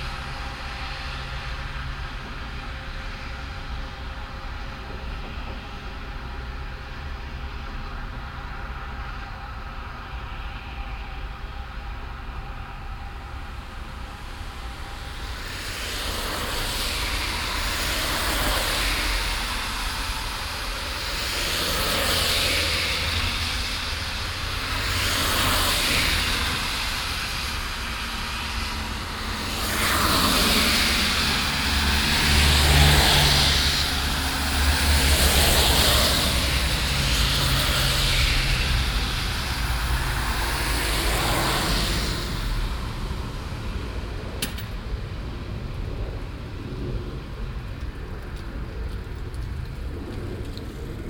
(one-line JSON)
{"title": "refrath, lustheide, nasse fahrbahn", "description": "strassenverkehr auf nasser strasse, morgens\nsoundmap nrw:\nsocial ambiences/ listen to the people - in & outdoor nearfield recording", "latitude": "50.95", "longitude": "7.11", "altitude": "69", "timezone": "GMT+1"}